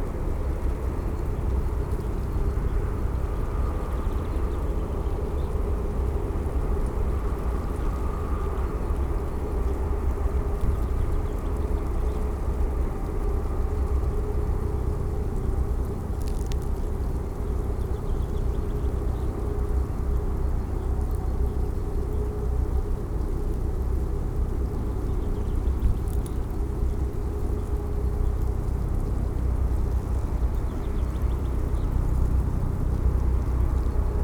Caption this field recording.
microphones close to busy anthill (Formica rufa), evening rush hour, traffic noise from nearby Berliner Autobahnring A10, helicopter. A strange mixture. (Tascam DR-100 MKIII, DPA4060)